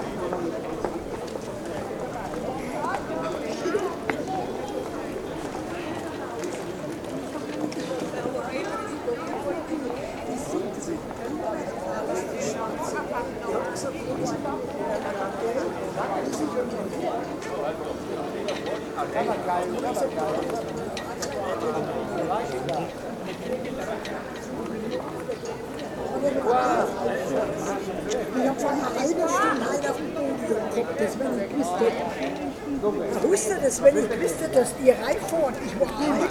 Sternplatz Buchhandlung, Bayreuth, Deutschland - Sternplatz Buchhandlung
central "sternplatz" near bookstore
Regierungsbezirk Oberfranken, Bayern, Deutschland, 29 May 2013, ~14:00